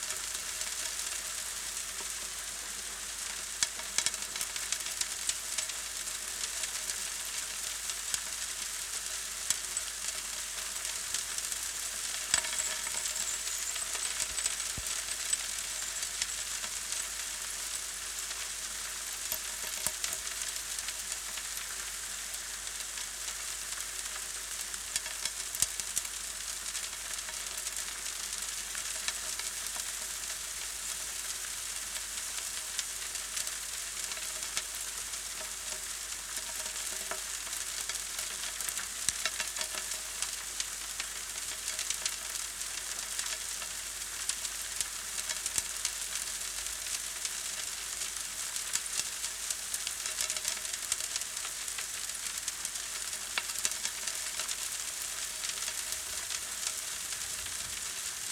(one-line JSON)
{"title": "Maasvlakte, Maasvlakte Rotterdam, Niederlande - pipeline transporting sand", "date": "2013-04-17 17:45:00", "description": "two akg 411p contact mics on pipe.", "latitude": "51.93", "longitude": "4.04", "altitude": "4", "timezone": "Europe/Amsterdam"}